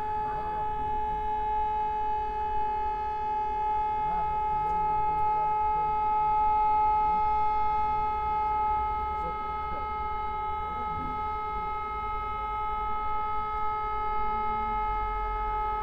Na Nivách, Česká Lípa - Siren test
Regular testing of urban sirens with reporting
Liberecký kraj, Severovýchod, Česká republika, 5 August 2020, 12:00